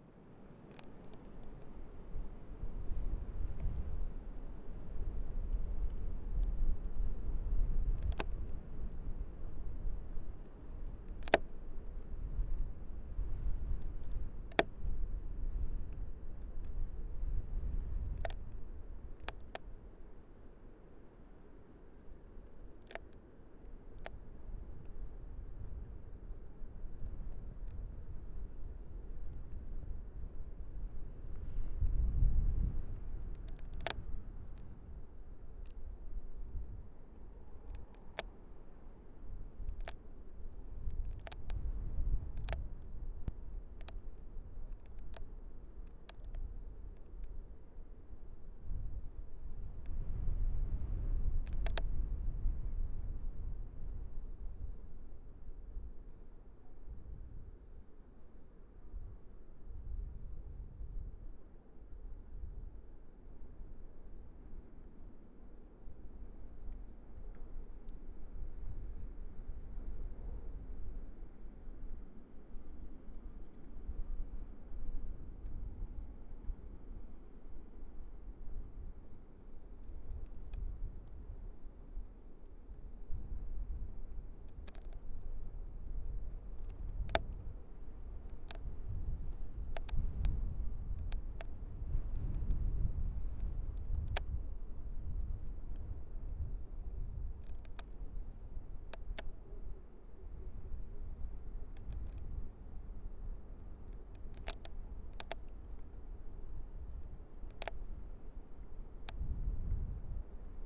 Lithuania, Utena, freezing tree in wind
close-up recording of freezing tree in a wind
15 December 2012, 20:20